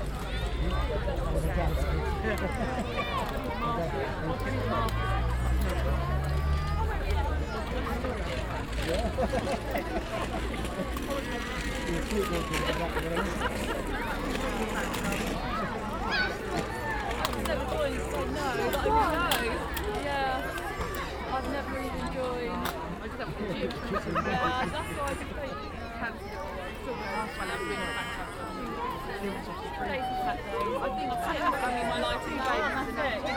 Reading, Primary school.
End of school day. Parents and children in playground.
Reading, UK, 4 March